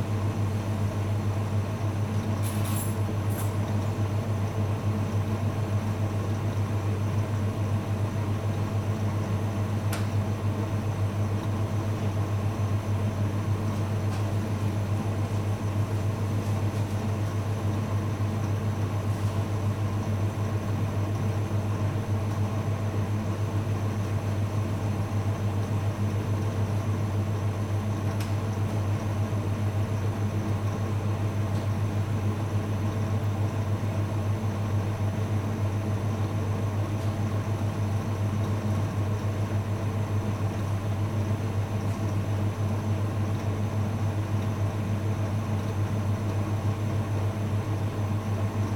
{
  "title": "Srem, at Kosmos club - refrigerator behind the bar",
  "date": "2013-11-04 01:46:00",
  "description": "the drone of the cooling units at the Kosmos club. the owner bustling around, tiding up before closing.",
  "latitude": "52.09",
  "longitude": "17.02",
  "altitude": "81",
  "timezone": "Europe/Warsaw"
}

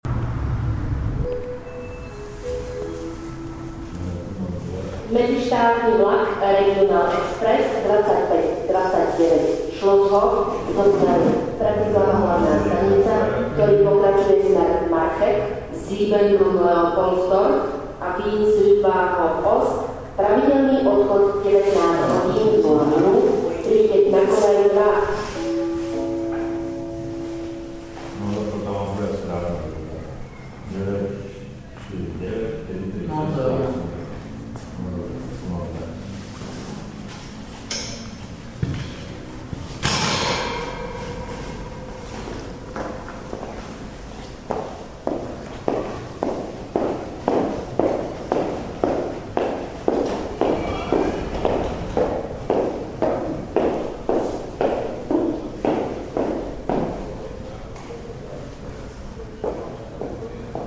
{
  "title": "train station in devinska nova ves",
  "date": "2010-08-30 16:00:00",
  "description": "announcements and ambience at the station",
  "latitude": "48.22",
  "longitude": "16.98",
  "altitude": "157",
  "timezone": "Europe/Bratislava"
}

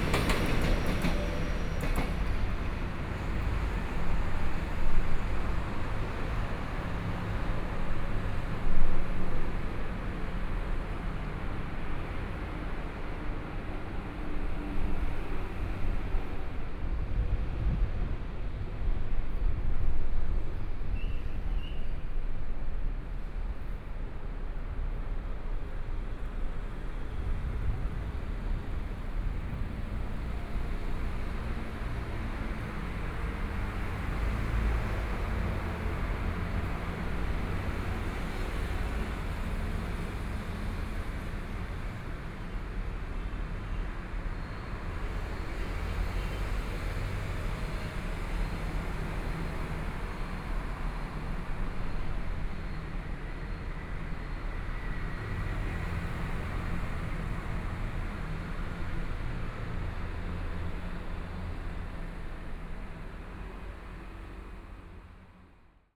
{
  "title": "Minzu Overpass, Taoyuan - Traffic noise",
  "date": "2013-09-11 11:28:00",
  "description": "Train traveling through, Sony PCM D50 + Soundman OKM II",
  "latitude": "24.99",
  "longitude": "121.31",
  "altitude": "101",
  "timezone": "Asia/Taipei"
}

